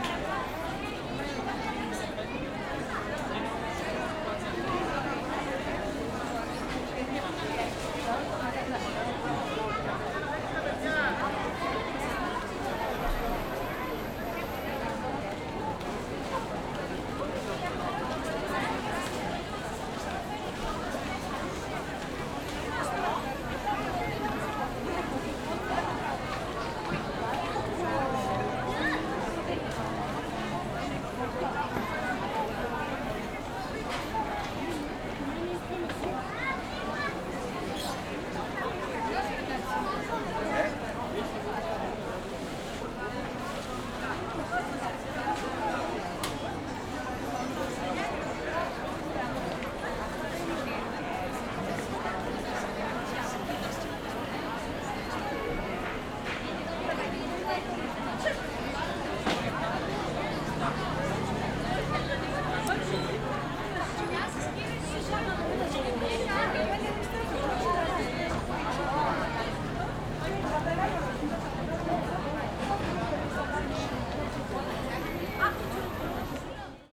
Xanthi, Greece - Big bazaar ambience
Bazaar ambience recorded in Xanthi, Greece on Saturday morning. The bazaar
takes place in the centre of the city each Saturday and it is renowned for
its oriental character and the diversity of merchandise on display filled
with colours, sounds and life.
Xánthi, Greece, 31 October 2015